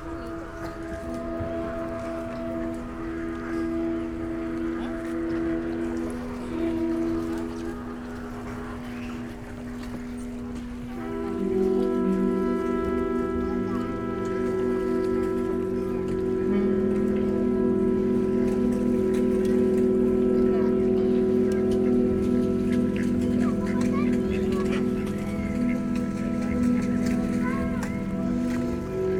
Viña del Mar, Valparaíso, Chile - Tsonami sound performance at laguna Sausalito
Viña del Mar, laguna Sausalito, sound performance for 16 instruments on pedal boats, by Carrera de Música UV and Tsonami artists
(Sony PCM D50, DPA4060)